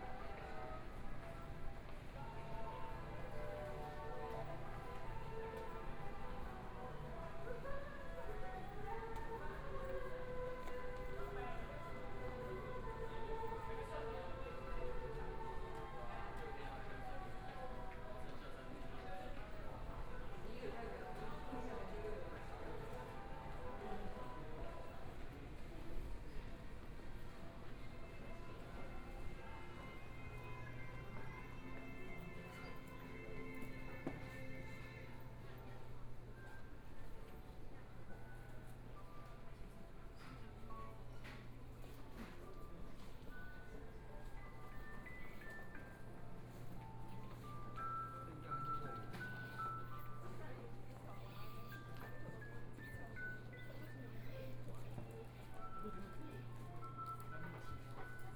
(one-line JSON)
{"title": "Taipei Main Station, Taiwan - walking in the Station", "date": "2014-02-10 19:46:00", "description": "Follow the footsteps, From the underground MRT station to mall, Clammy cloudy, Binaural recordings, Zoom H4n+ Soundman OKM II", "latitude": "25.05", "longitude": "121.52", "timezone": "Asia/Taipei"}